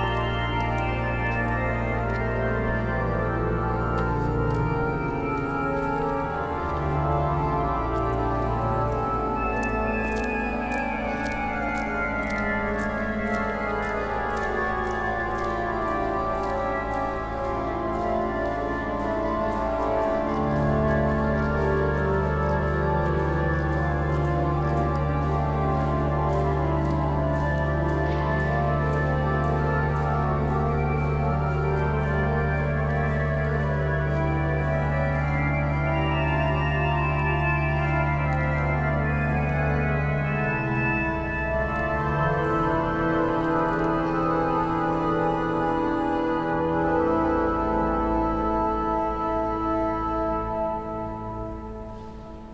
via Conservatorio, Church of Santa Maria della Passione, Milan, Italy - In cornu Epistolae organ, Santa Maria della Passione
The “In cornu Epistolae organ” played to announce the beginning of the mass at Santa Maria della Passione, in Milan. The organ's doors, painted by Daniele Crespi, are open. The church is still empty.